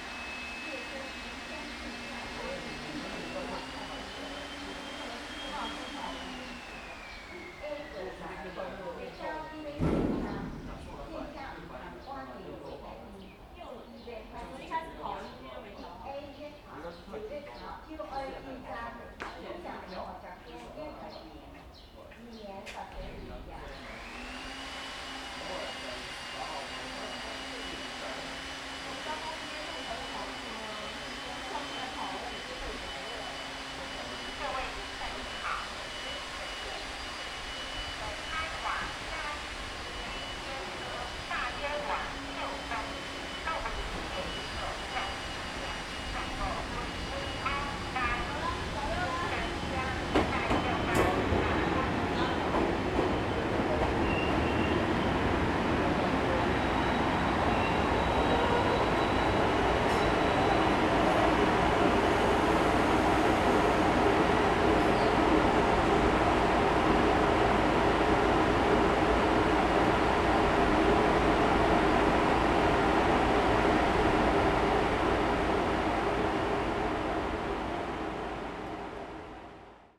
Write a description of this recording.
Station broadcast messages, Construction noise, Sony ECM-MS907, Sony Hi-MD MZ-RH1